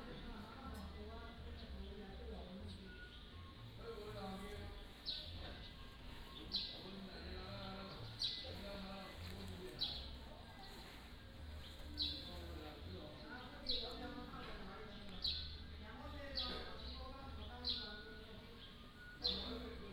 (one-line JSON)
{"title": "馬祖村, Nangan Township - Small village streets", "date": "2014-10-15 09:04:00", "description": "Small village streets, Small village mall, Birds singing", "latitude": "26.16", "longitude": "119.92", "altitude": "19", "timezone": "Asia/Taipei"}